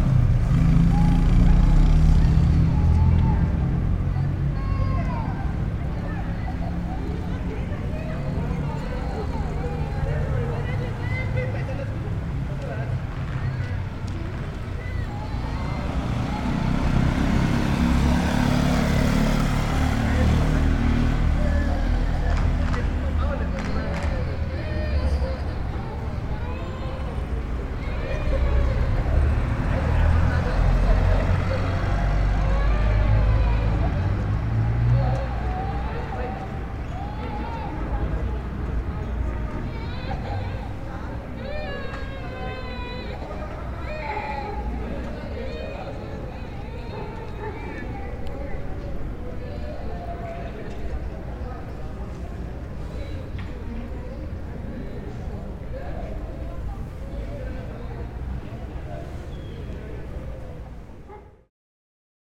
Carrera, Bogotá, Colombia - Parque La Araña, Venecia
It is a park in the Venecia neighborhood in the south of the city. A constant wind is evident. Car and motorcycle engines passing from time to time through the quietest part of the neighborhood. Constant dog barking. People running and whistles and shouts of young people who inhabit the neighborhood, maintaining a common slang within the area.